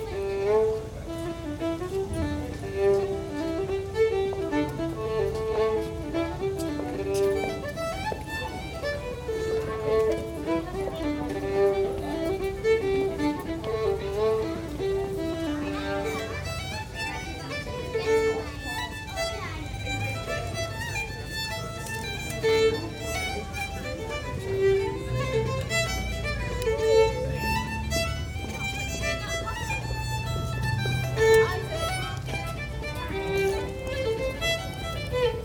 High St, Skipton, UK - Busking